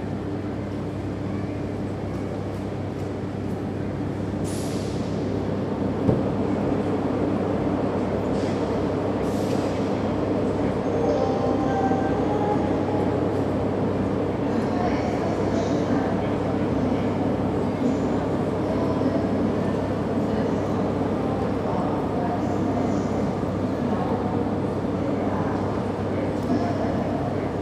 General atmosphere Gare Du Nord, Paris.